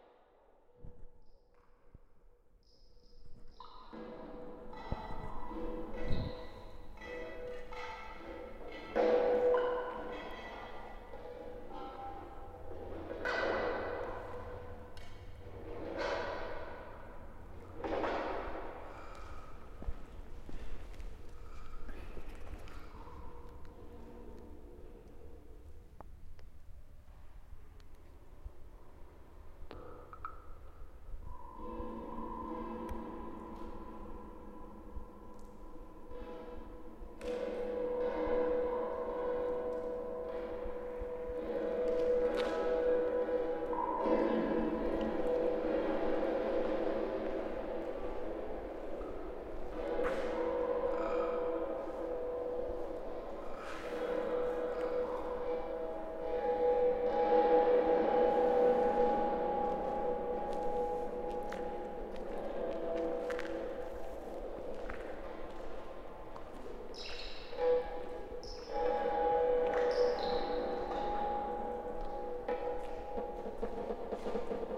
Place de lIndustrie, Amplepuis, France - Amplepuis Feyssel1
Jeux acoustiques dans une usine désaffectée